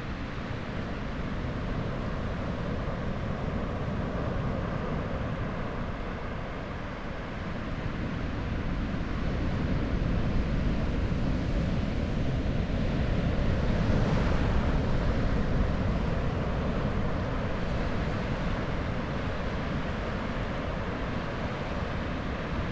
Cooks Landing, Atiu Island, Cookinseln - Pacific late in the evening low tide
Same beach, same day but late in the evening. Lower tide and less wind resulting in a much weaker surf and thus less roar. Dummy head Microphopne facing seaward, about 6 meters away from the waterline. Recorded with a Sound Devices 702 field recorder and a modified Crown - SASS setup incorporating two Sennheiser mkh 20 microphones.